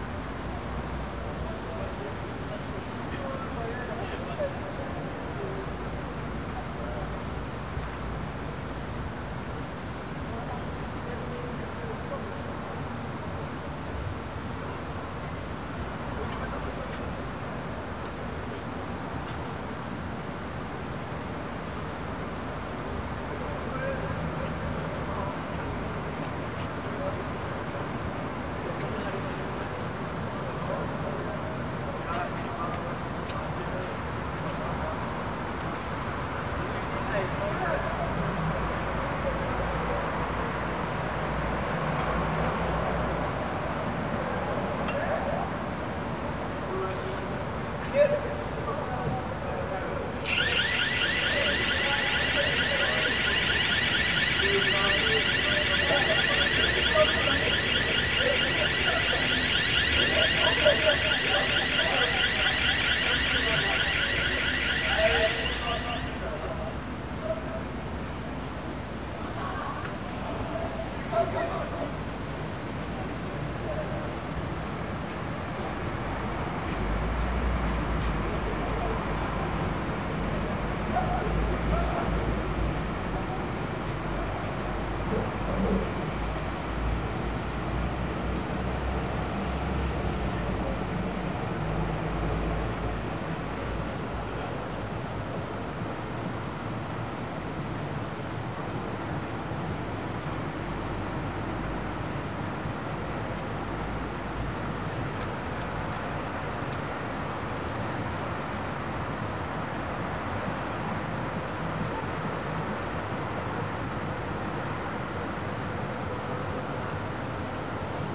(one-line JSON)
{"title": "Poznan, balcony - rarefied night air", "date": "2014-08-10 00:33:00", "description": "alarm going on and off and echoing off the vast apartment buildings around. conversation and laughs of a juvenile group among the trees. a carpet of crickets on a field in front of me. not too much traffic, sounds spreads effectively and repeats with a nice short delay. summer night - at it's peak.", "latitude": "52.46", "longitude": "16.90", "timezone": "Europe/Warsaw"}